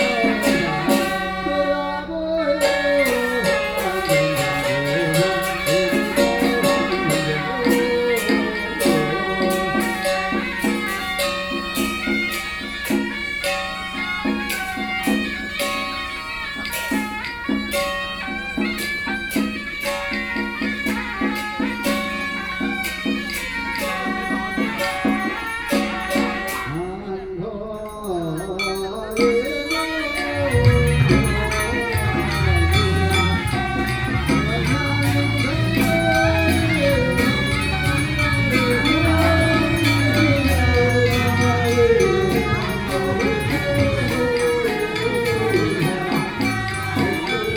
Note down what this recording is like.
Hakka Culture Traditional Ceremony, Binaural recordings, Sony PCM D50 + Soundman OKM II